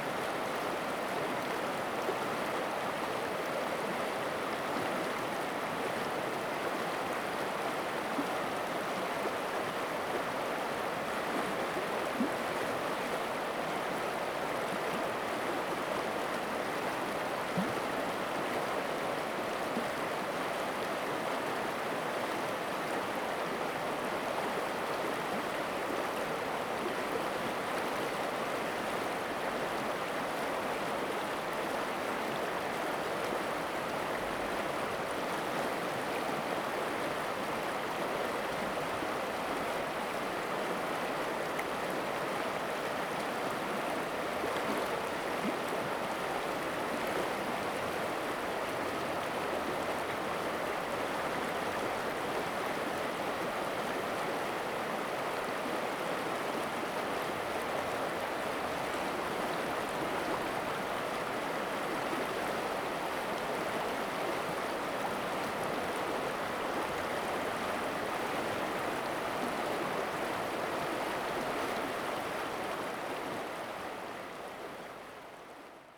river, Stream sound
Zoom H2n MS+XY
Jinfeng Township, 東64鄉道, 3 April